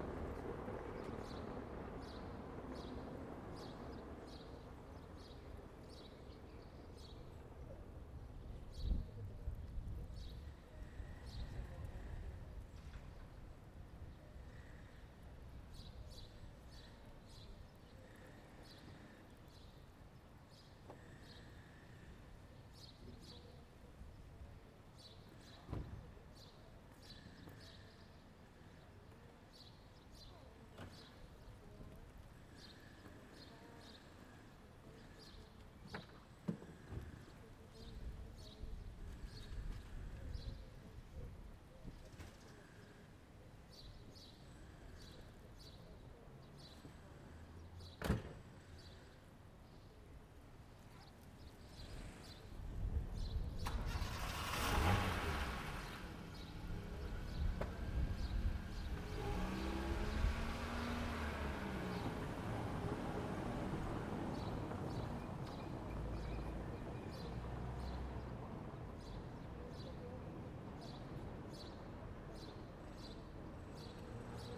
Αντίκα, Ξάνθη, Ελλάδα - Metropolitan Square/ Πλατεία Μητρόπολης- 13:45
Light traffic, birds singing, person talking distant.